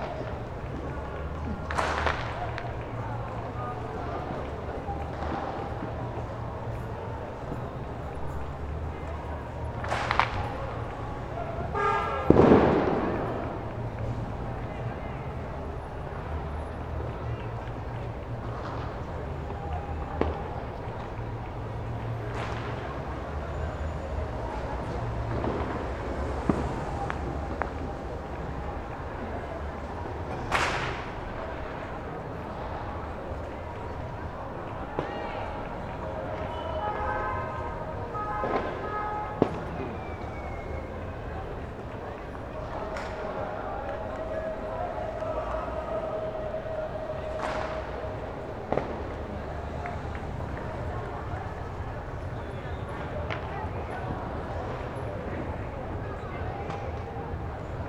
fiesta de san juan, barceloneta
fiesta de san juan
24 June 2010, 02:39